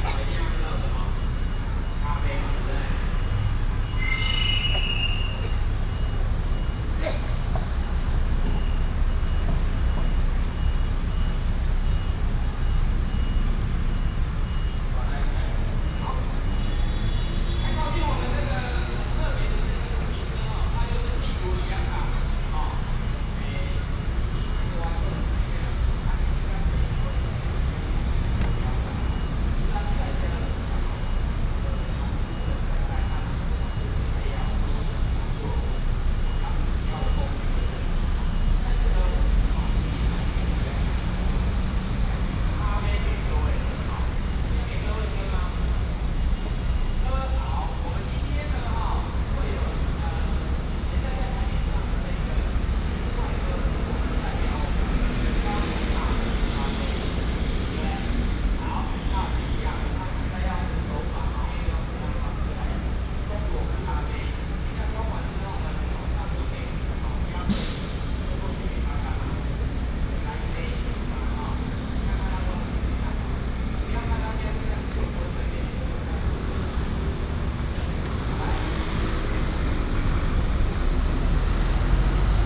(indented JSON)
{
  "title": "Guangfu Rd., Yilan City, Yilan County - At the bus station",
  "date": "2016-11-18 17:32:00",
  "description": "At the bus station, Traffic sound, ambulance",
  "latitude": "24.75",
  "longitude": "121.76",
  "altitude": "14",
  "timezone": "Asia/Taipei"
}